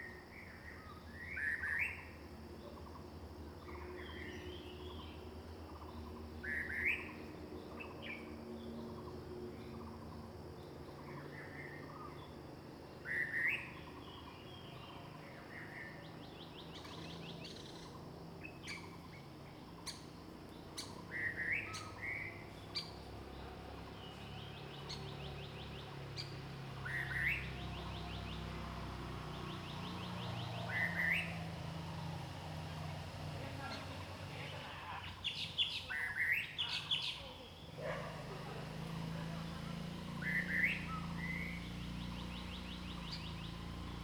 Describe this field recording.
Bird sounds, Traffic Sound, In the woods, Zoom H2n MS+XY